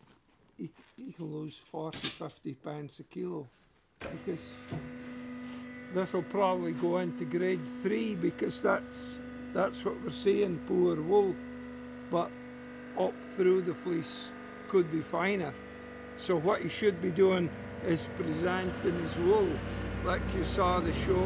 Jamieson & Smith, Shetland Islands, UK - Oliver Henry talking about the way wool should be presented to the wool grader, and wool being baled by the enormous baling machine
This is Oliver Henry (a wool sorter for 46 years) showing me some of the wool that has been sent in for sorting and grading. We are in the wool shed at Jamieson & Smith, surrounded by huge bags filled with fleeces fresh from the crofts; bales of sorted wool, ready to be taken for scouring and spinning; and the 1970s baling, which compresses roughly 300kg of wool into each big bale. Oliver is talking about how the wool should be presented when it is given in to the wool brokers for grading and sorting, and we are looking at some fleeces which have been sent in all in a jumble. Shetland sheep have quite varied fleeces, and you might have very fine wool in one part of the fleece but rougher wool in another; the rougher stuff gets graded in a certain way and mixed with other wool of a similar grade, to make carpets and suchlike. The softer stuff gets graded differently, and mixed with other wool of a similar high quality.
6 August 2013